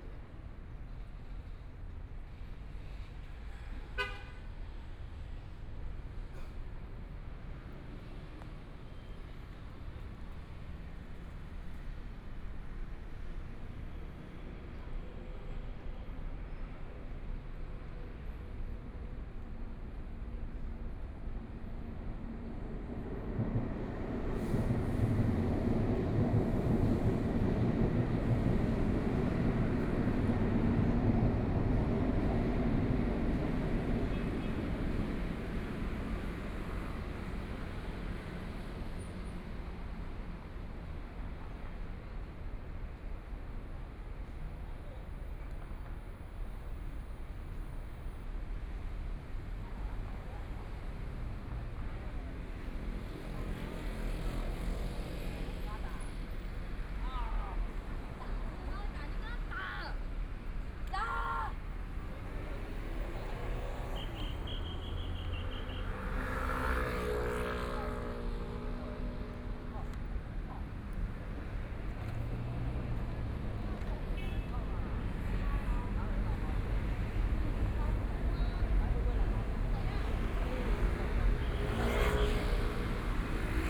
Tamsui Line, Taipei - Walking beneath the track
Walking beneath the track, from Minzu W. Rd. to Yuanshan Station, Binaural recordings, Zoom H4n+ Soundman OKM II
Taipei City, Taiwan, 20 January 2014